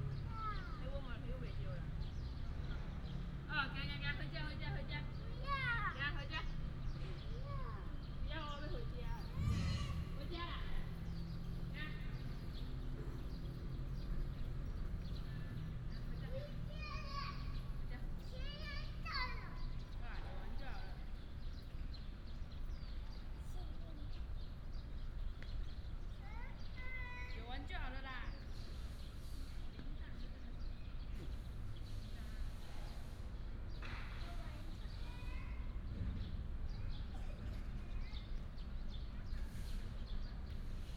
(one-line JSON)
{"title": "Sec., Daren Rd., Dacun Township - in the Park", "date": "2017-04-06 14:05:00", "description": "in the Park, sound of the birds, Traffic sound, Children's play area", "latitude": "23.99", "longitude": "120.54", "altitude": "24", "timezone": "Asia/Taipei"}